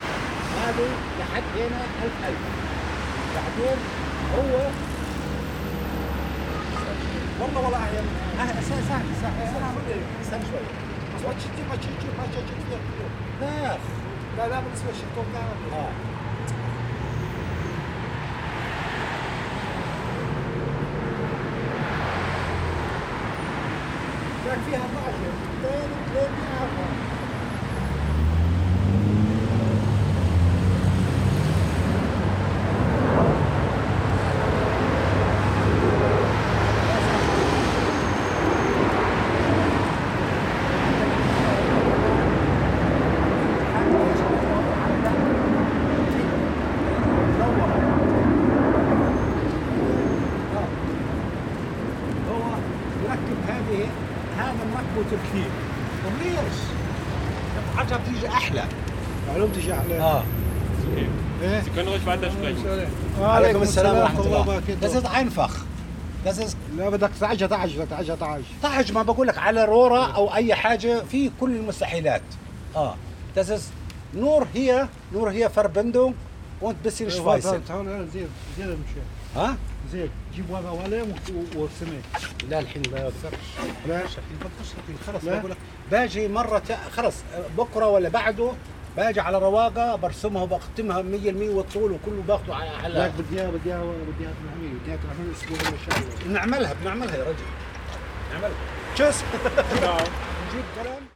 Prinzenallee, Soldiner Kiez, Wedding, Berlin, Deutschland - Prinzenallee, Berlin - In front of OKK
Prinzenallee, zwischen OKK und dem benachbarten Shop: Diskussion über notwendige Reparaturen an einer Leuchtreklame in Form einer Wasserpfeife.
Berlin, Germany, 10 November 2012